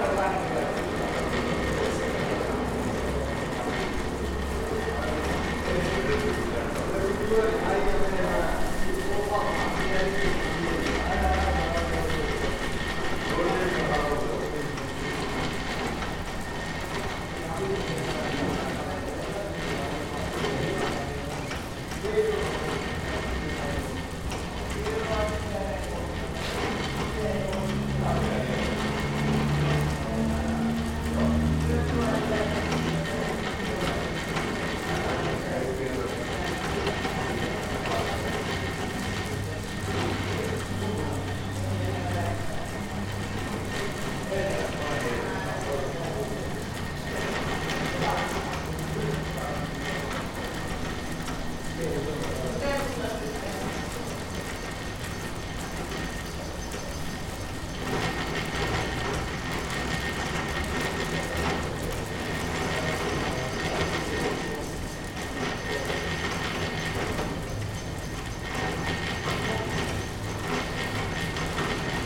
Gomi-Sachkhere-Chiatura-Zestaponi, Chiatura, Georgia - Cable car station in Chiatura
The mechanism of one of the many cable cars in this city is heard while people wait for the next car to arrive.
იმერეთი, Georgia / საქართველო, 3 July, 03:00